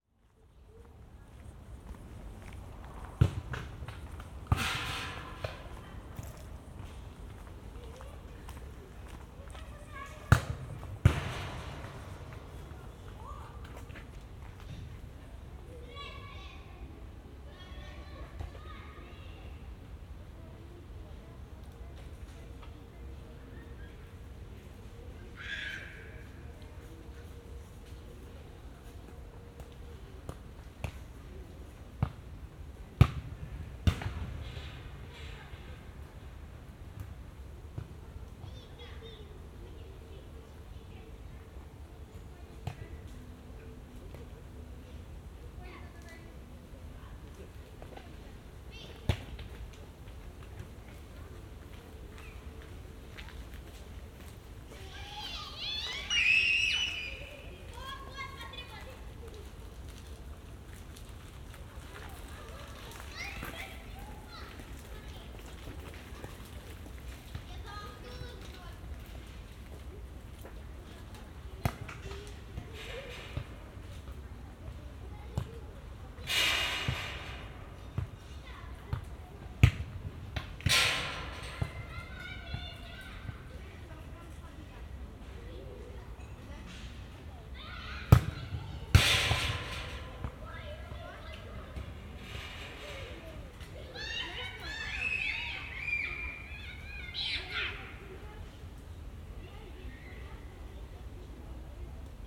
ул. Комитетский Лес, Королёв, Московская обл., Россия - kicking the ball on the playground
Boy is kicking the ball on the playground, children are screaming...
Recorded with Zoom H2n and Roland CS-10EM stereo microphone